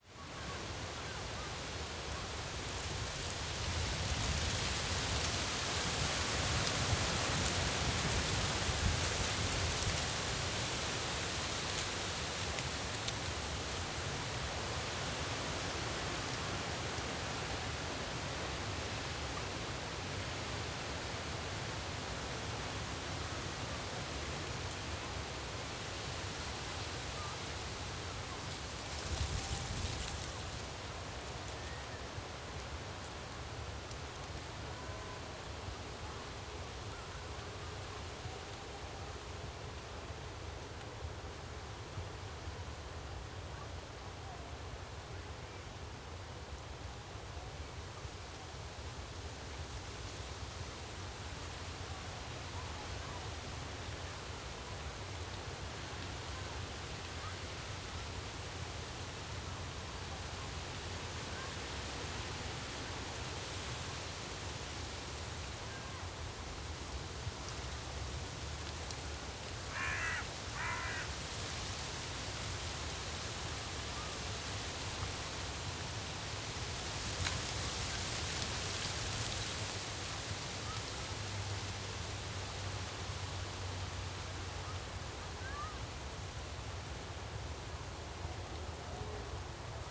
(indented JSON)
{
  "title": "Tempelhofer Feld, Berlin, Deutschland - early autumn, light wind",
  "date": "2018-10-03 16:50:00",
  "description": "place revisited, warm early autumn afternoon, light breeze in the poplars\n(Sony PCM D50, DPA4060)",
  "latitude": "52.48",
  "longitude": "13.40",
  "altitude": "42",
  "timezone": "Europe/Berlin"
}